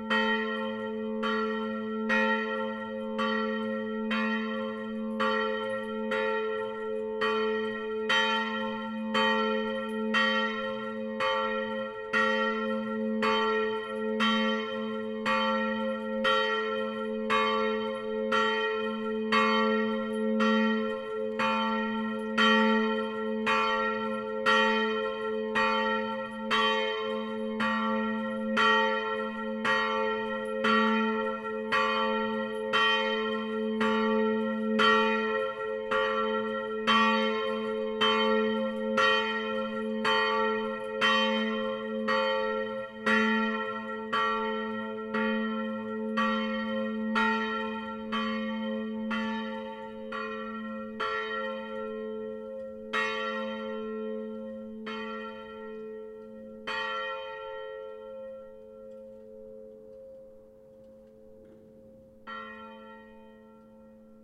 Imp. de Leglise, Chénelette, France - Chénelette - clocher
Chénelette - Rhône
clocher - 19h + Angélus
France métropolitaine, France, 26 August 2018, 19:00